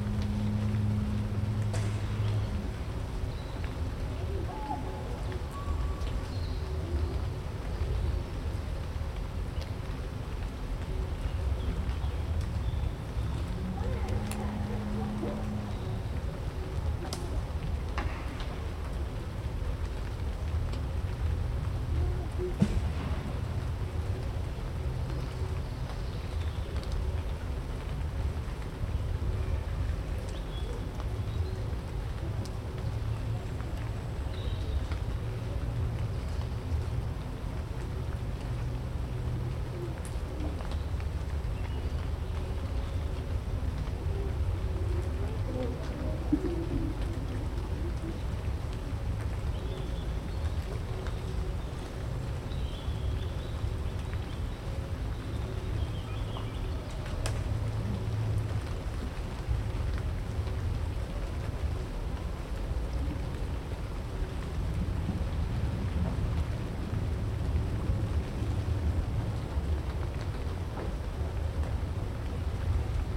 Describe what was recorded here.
motorboat, airplane, airgun, people, birds, dog, rain